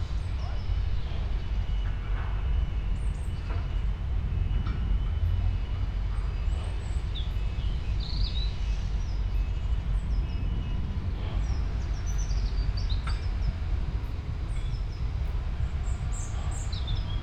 New industrial units are being built on an old landfill site by the river Kennet near Reading. A couple of Robins engage in winter song and the pile-driver and hammers provide an accompaniment. Sony M10 with homemade boundary array.